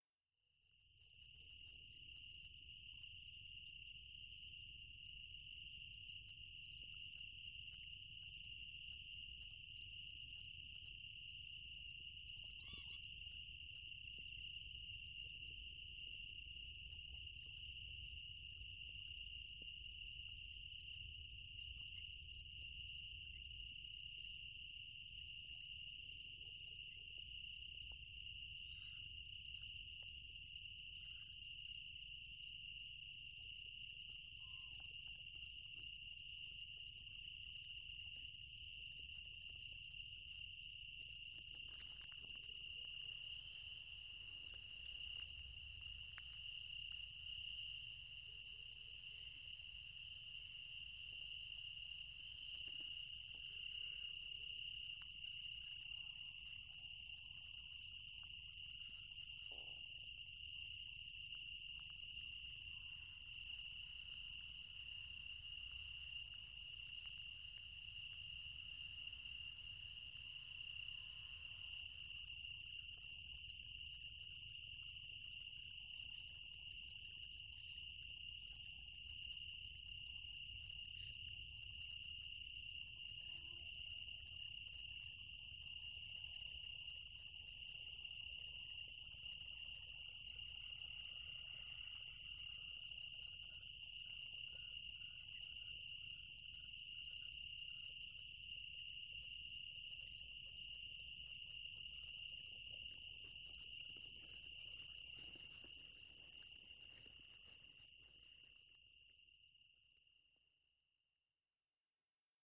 Geiselsteller - Large Conehead
piercing stridulation sounds inside national park neusiedler see. 2 omni mics in olson wing array